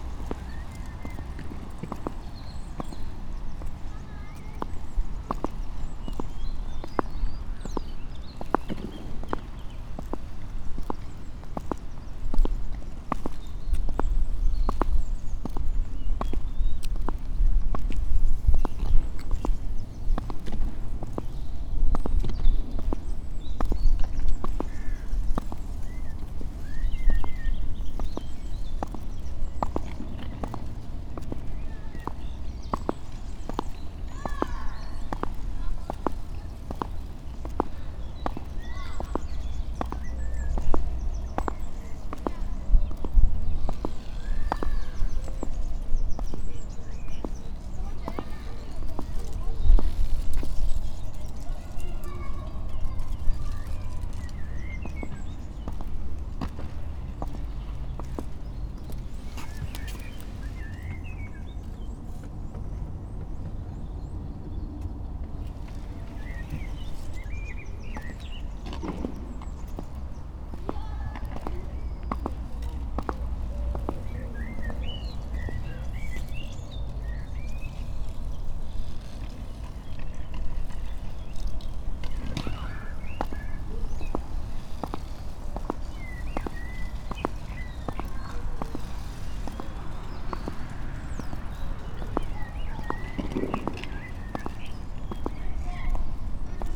inside the pool, mariborski otok - with clogs ...
walking, dry leaf here and there, winds, two boys skating in smaller pool, birds